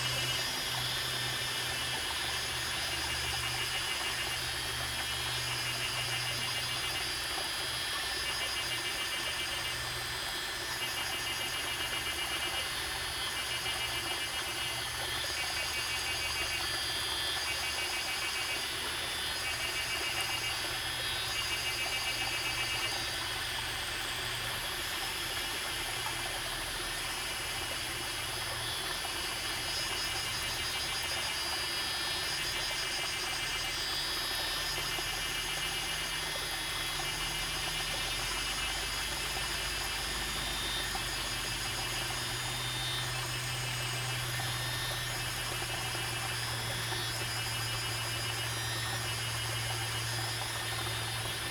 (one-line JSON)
{"title": "林頭坑, 桃米里Puli Township - Sound of water and Cicada", "date": "2016-05-16 16:19:00", "description": "Cicada sounds, Sound of water\nZoom H2n MS+XY", "latitude": "23.94", "longitude": "120.93", "altitude": "479", "timezone": "Asia/Taipei"}